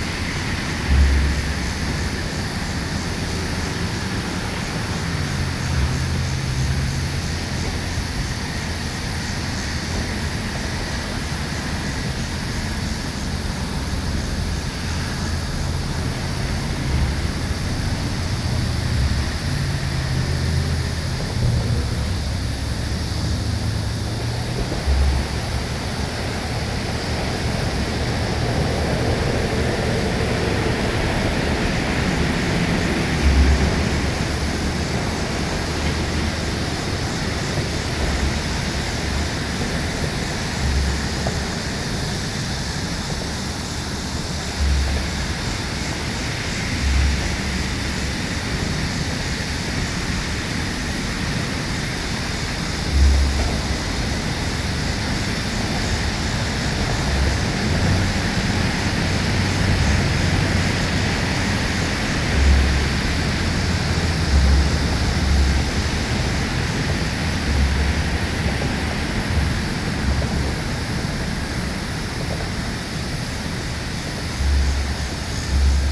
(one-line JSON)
{"title": "Minnie Ha Ha Park, Sunset Hills, Missouri, USA - Minnie Ha Ha", "date": "2020-09-05 14:24:00", "description": "Ambient sounds from field below Missouri Route 30 Highway Bridge crossing Meramec River", "latitude": "38.52", "longitude": "-90.43", "altitude": "120", "timezone": "America/Chicago"}